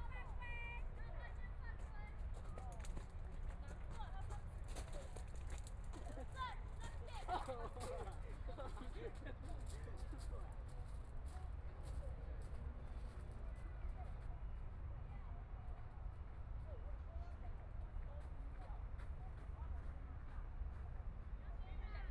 Xinsheng Park - Taipei EXPO Park - Walk
迷宮花園, Traffic Sound, Binaural recordings, Zoom H4n+ Soundman OKM II